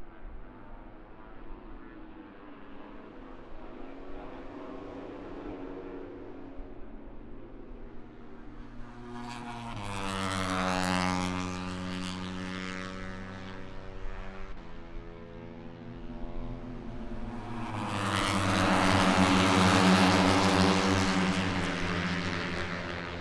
Towcester, UK - british motorcycle grand prix 2022 ... moto three ...
british motorcycle grand prix 2022 ... moto three free practice one ... zoom h4n pro integral mics ... on mini tripod ...
England, United Kingdom